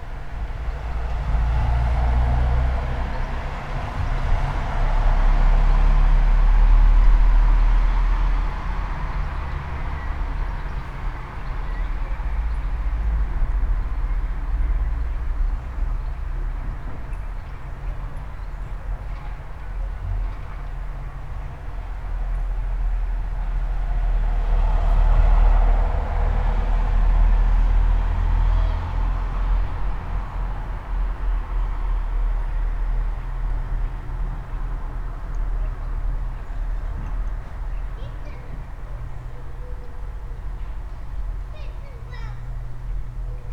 {
  "title": "all the mornings of the ... - jul 28 2013 sunday 08:34",
  "date": "2013-07-28 08:34:00",
  "latitude": "46.56",
  "longitude": "15.65",
  "altitude": "285",
  "timezone": "Europe/Ljubljana"
}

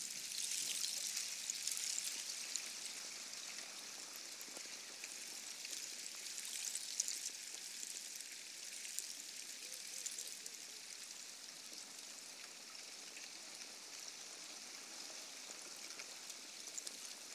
{
  "title": "Samcheon-dong, Chuncheon-si, Gangwon-do, South Korea - at the edge of the frozen river",
  "date": "2014-01-01 12:00:00",
  "description": "the ice across the frozen river is agitated by surface wave action",
  "latitude": "37.87",
  "longitude": "127.71",
  "altitude": "73",
  "timezone": "Asia/Seoul"
}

